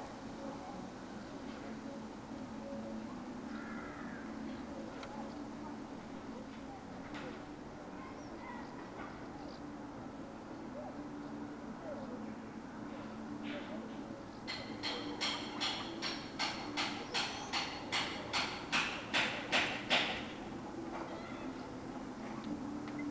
today is Lithuanias National Day. some concert heard in the distance and people still working at home...
6 July, 18:15